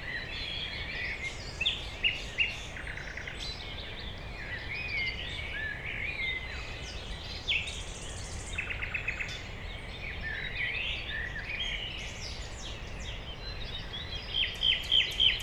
Berlin, Friedhof Columbiadamm - dawn chorus
Berlin, cemetery Friedhof Columbiadamm, before sunrise, dawn chorus day, nighingale and other birds
singing
(SD702, Audio Technica BP4025)